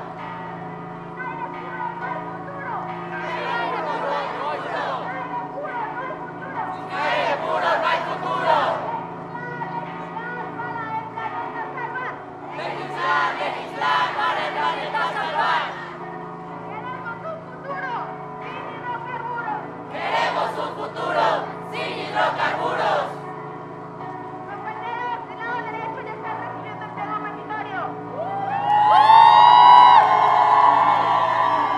Av. Juan de Palafox y Mendoza, Centro histórico de Puebla, Puebla, Pue., Mexique - "No Hay Planeta B" - Puebla 2019
Puebla (Mexique)
Sur la place Central (El Zocalo) des étudiants manifestent pour le respect et la protection de la planète.